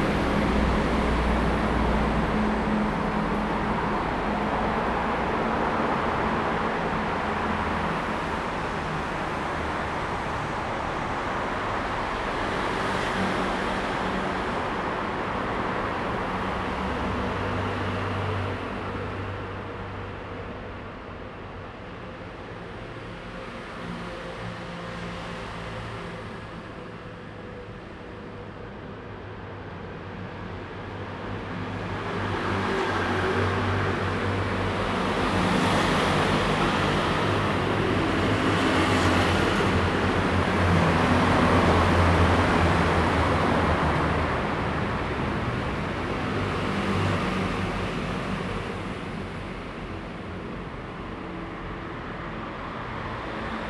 Xida Rd., East Dist., Hsinchu City - Underground lane
Traffic sound, Underground lane
Zoom H2n MS+XY
13 February 2017, 14:56